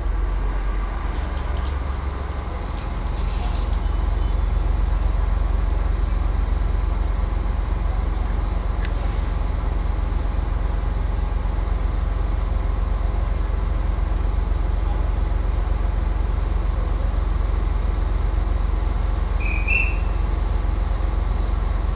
Bugeat, France - WLD 2014 gare de Bugeat
Common countryside birds_Train arrival and departure_Masterstation working on the bell
14 April 2014